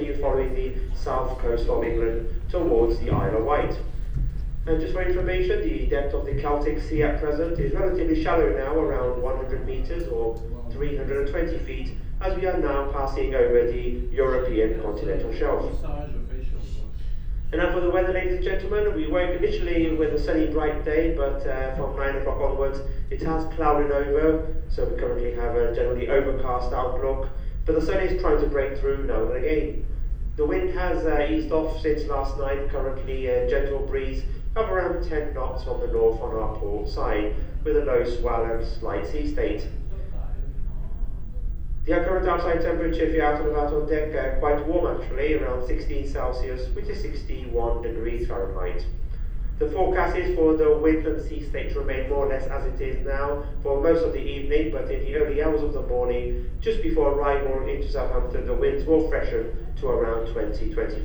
Western Approaches, North Atlantic Ocean. - Announcement
Recorded while walking around the art gallery on the Queen Mary 2 on the final full day of an Atlantic crossing from New York. In the morning we will be ashore at 7am in Southampton.
MixPre 3 with 2 x Beyer Lavaliers
13 June 2019